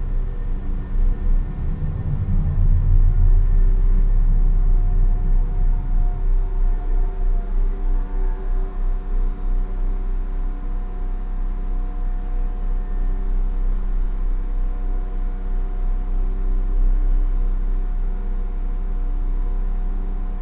Passenger tunnel under the train
Recorded in a tunnel under the track as the train arrives and leaves. A few people leave by the tunnel.
MixPre 6 II with 2 Sennheiser MKH 8020s.